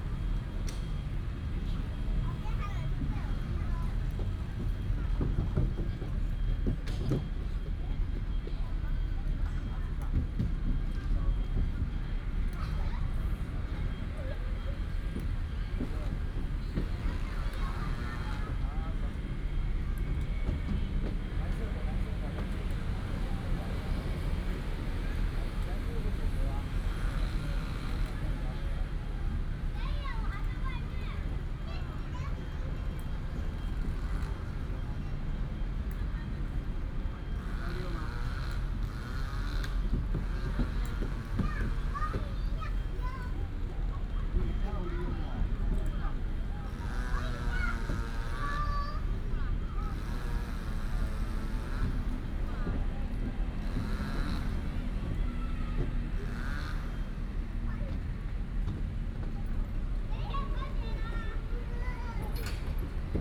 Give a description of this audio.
in the Park, Traffic sound, Children's play area, Construction sound